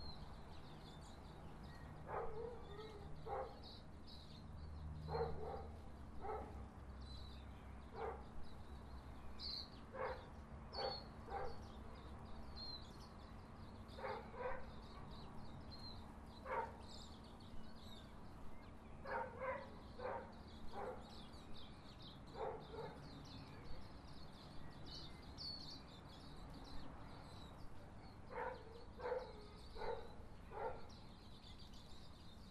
22 Adderley Terrace, Ravensbourne, DUNEDIN, New Zealand
Bellbirds, wax-eyes & a suburban Sunday orchestra
18 July